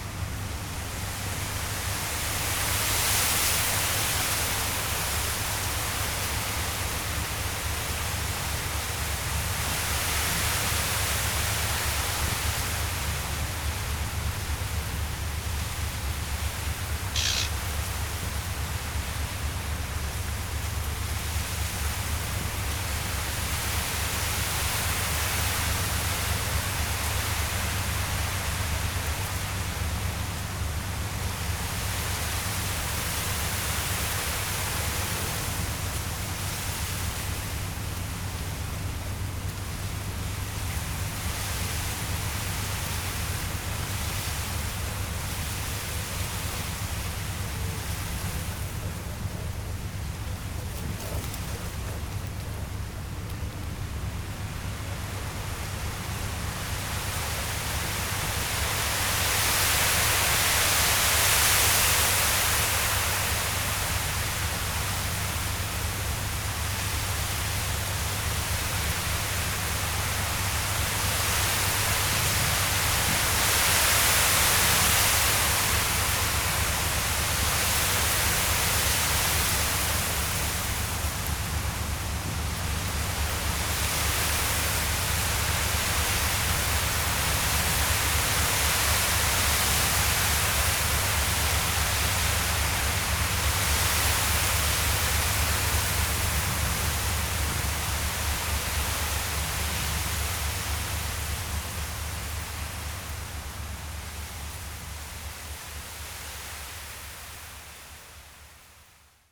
Fatouville-Grestain, France - Reeds
Wind in the reeds, in front of the Seine river. The discreet bird is a Eurasian reed warbler.
Berville-sur-Mer, France